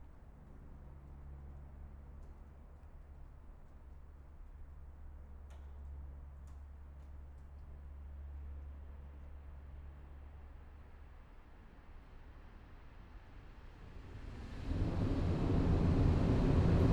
Güldenhofer Ufer, Baumschulenweg, Berlin - under bridge, trains passing
Berlin, Baumschulenweg, under S-Bahn bridge, trains passing by
(Sony PCM D50, DPA4060)